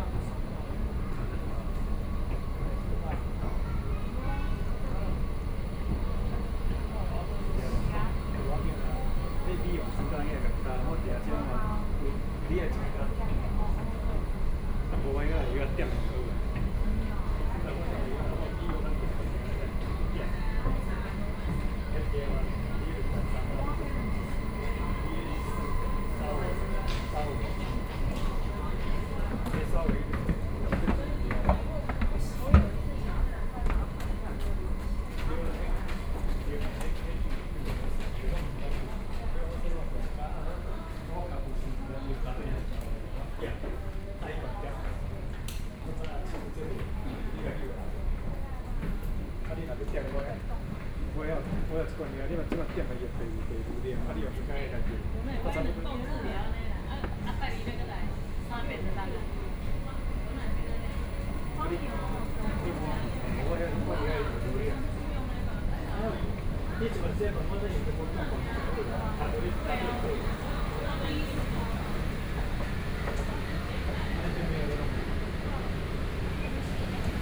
Sanmin Senior High School Station - walking out of the station
walking out of the station, Binaural recordings, Sony PCM D50 + Soundman OKM II
New Taipei City, Taiwan